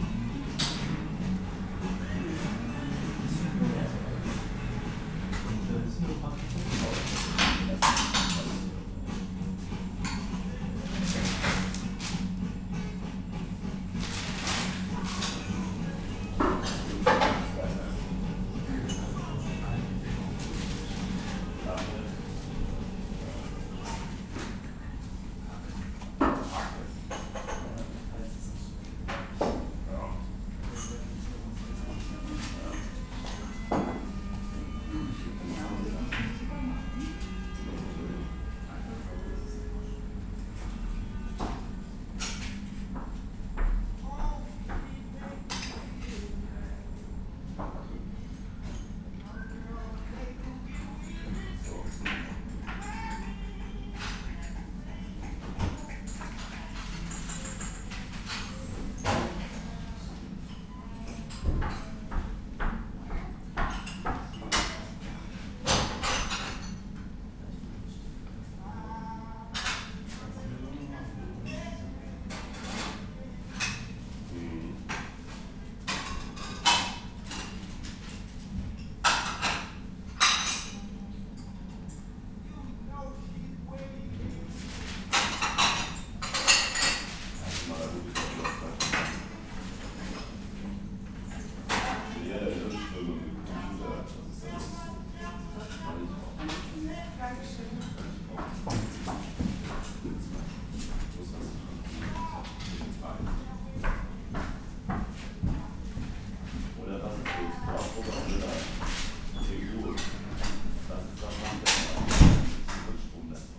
ringo - cafe ambience, gone
Ringo Cafe, saturday early afternoon, cafe ambience, almost intimate sounds of a place that will have gone missing soon.
(Sony PCM D50, DPA4060)